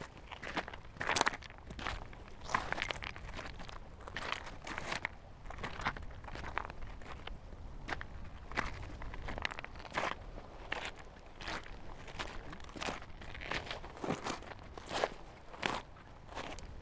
the city, the country & me: october 3, 2010
Middelhagen, Germany, October 2010